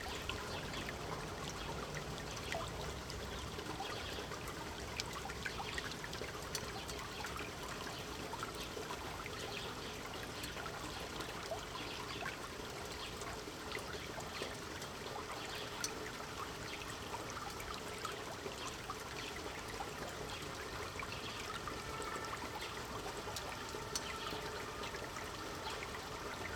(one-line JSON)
{"title": "Rhöndorf, Löwenburgstr. - offener Abwasserkanal / open canal", "date": "2009-05-07 17:30:00", "description": "07.05.2009 Rhöndorf, überirdisch geführte Wasserableitung am Strassenrand, vermutlich vom über dem Dorf gelegenen Drachenfels bzw. umliegenden Bergen / open canal at street level, clean water, probably from the Drachenfels and other surrounding mountains", "latitude": "50.66", "longitude": "7.21", "altitude": "76", "timezone": "Europe/Berlin"}